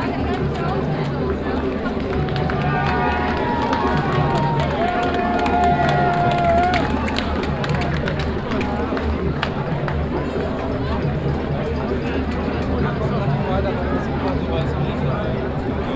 Stadt-Mitte, Düsseldorf, Deutschland - Düsseldorf. Theodor Körner Street, City Marathon
In the city center during the annual city marathon. The sounds of passengers speaking and encouraging the runners at the street which is blocked for the city. Nearby at the street a group of drummers playing.
soundmap nrw - topographic field recordings, social ambiences and art places
Nordrhein-Westfalen, Deutschland, European Union, 28 April 2013